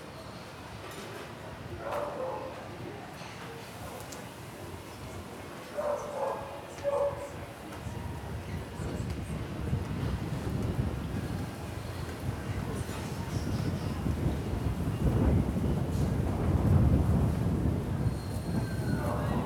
coming from a back street near cafe do electrico. dog barking, pet birds on balconies, old trams passing by. owner of the cafe, standing in front door talking to somebody inside of the place.
Lisbon, Portugal, 26 September 2013